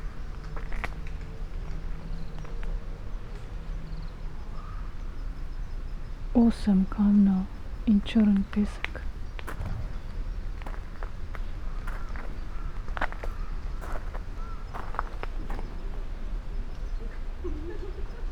Taizoin, zen garden, Kyoto - seven stones and white sand, eight stones and black sand
graveled ocean
mute in his reality fragments
- analogue melancholy -
Kyoto Prefecture, Japan, 4 November